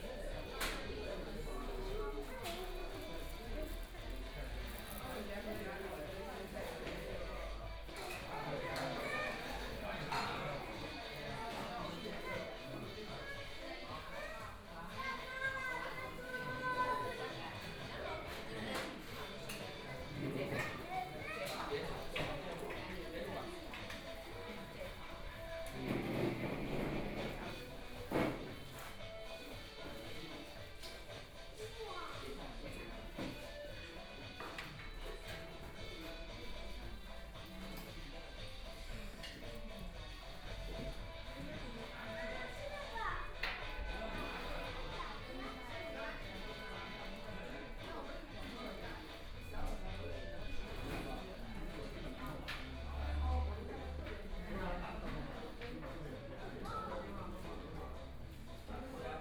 中華人民共和國上海黃浦區 - In the restaurant
from Laoximen Station to South Xizang Road Station, Binaural recordings, Zoom H6+ Soundman OKM II